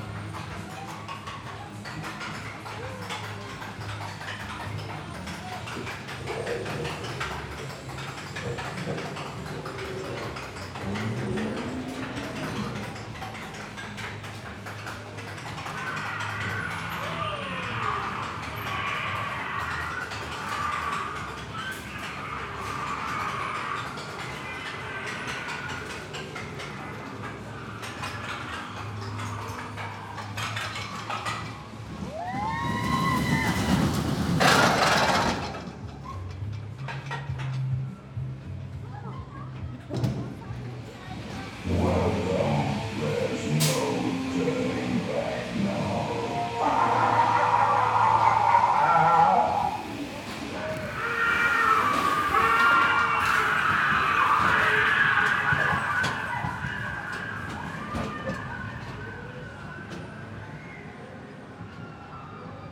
Temple of Doom, Berlin Alexanderplatz - Rollercoaster ride through the temple of doom at fun fair
A complete sound ride on a rollercoaster inside the temple of doom at the christmas market's fun fair. Creatures to scare visitors.
Recorded device: Sony PCM-D100, handheld, with windjammer. XY at 90°.
17 December 2015, 21:35